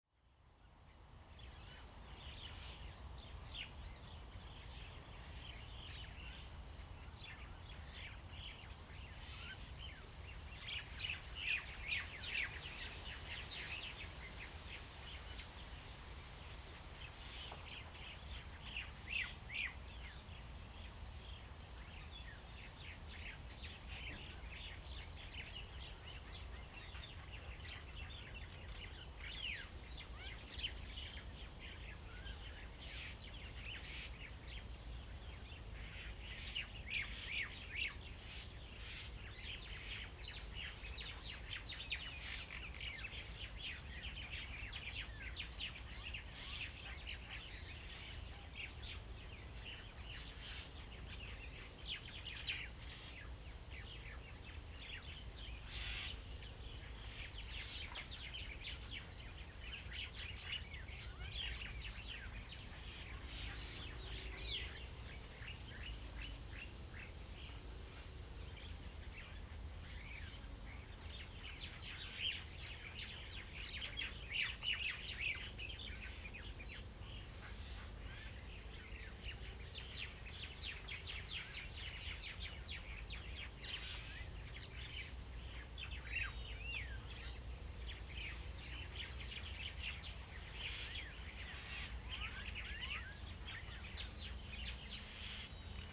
湖埔路, Lieyu Township - Birds singing
Birds singing, Traffic Sound, Dogs barking
Zoom H2n MS+XY